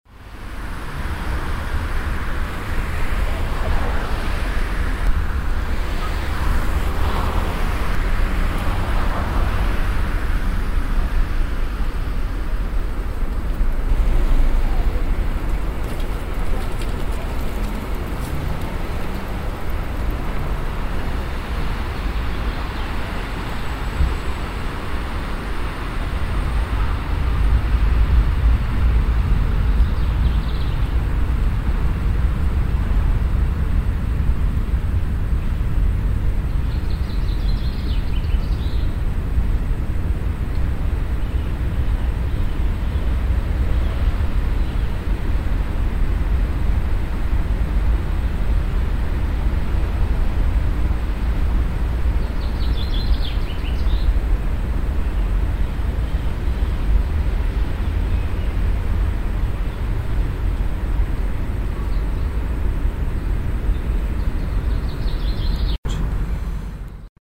cologne, stadtgarten, soundmap, venloerstrasse
stereofeldaufnahmen im september 07 mittags
project: klang raum garten/ sound in public spaces - in & outdoor nearfield recordings
stadtgarten park, venloerstrasse